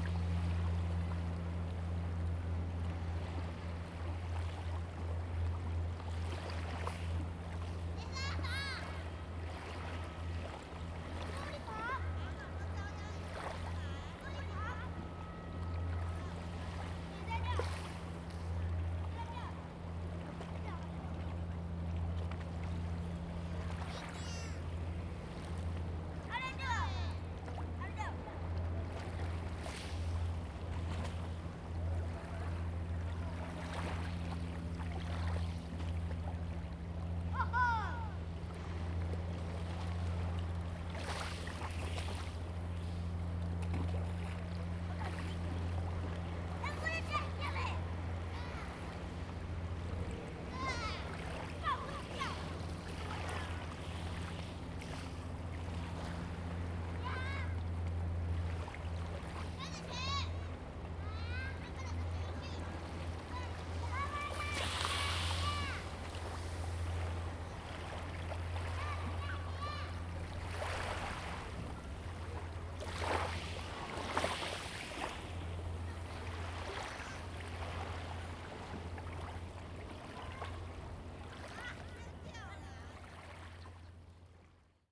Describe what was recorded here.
Small fishing port, Small beach, Sound of the wave, Zoom H2n MS+XY